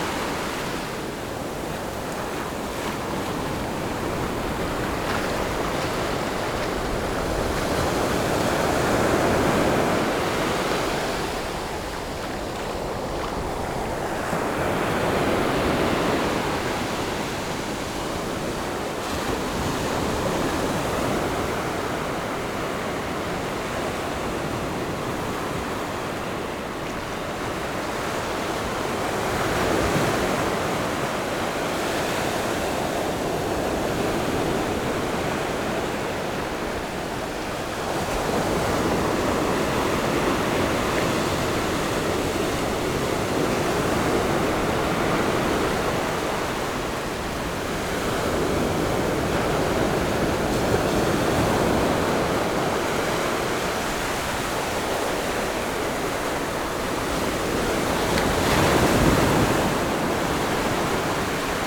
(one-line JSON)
{"title": "永鎮海濱公園, Yilan County - sound of the waves", "date": "2014-07-26 15:25:00", "description": "In the beach, Sound of the waves\nZoom H6 MS+ Rode NT4", "latitude": "24.78", "longitude": "121.82", "timezone": "Asia/Taipei"}